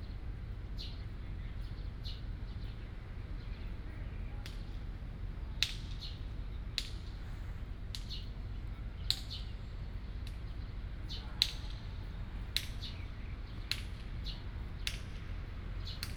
空軍十五村, Hsinchu City - Clap and birds sound
in the park, Birds sound, Clap sound, Formerly from the Chinese army moved to Taiwans residence, Binaural recordings, Sony PCM D100+ Soundman OKM II